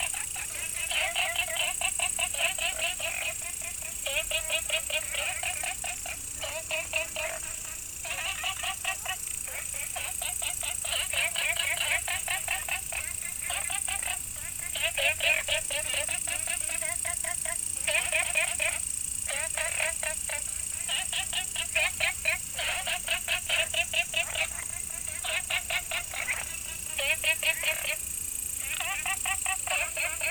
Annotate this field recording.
Ecological pool, Frog chirping, Insect sounds, walking In Bed and Breakfasts